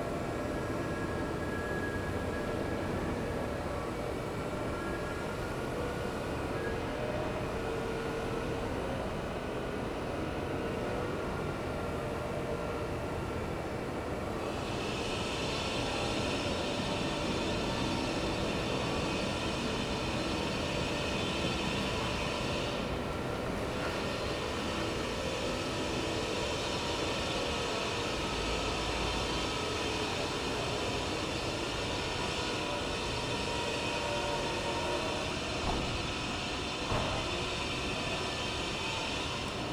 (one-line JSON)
{"title": "Dominikánská, Brno-střed-Brno-město, Czechia - morning traffic", "date": "2018-10-31 07:33:00", "description": "what you can hear early morning, from the window of the guest room of the Brno art house.", "latitude": "49.19", "longitude": "16.61", "altitude": "233", "timezone": "GMT+1"}